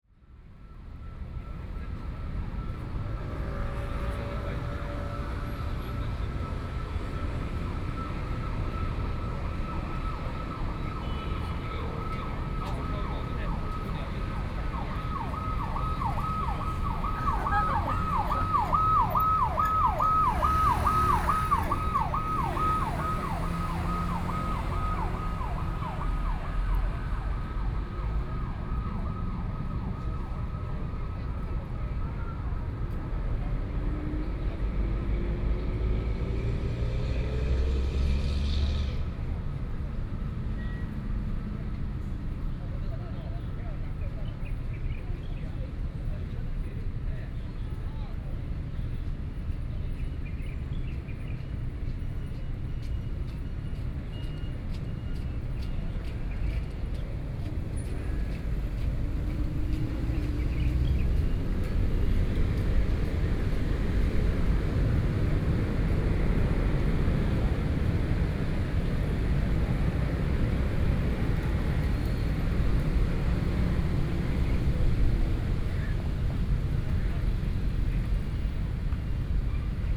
Chiang Kai-Shek Memorial Hall Station - Road corner
Road corner, Traffic Sound, Birds
Sony PCM D50+ Soundman OKM II
27 April, ~14:00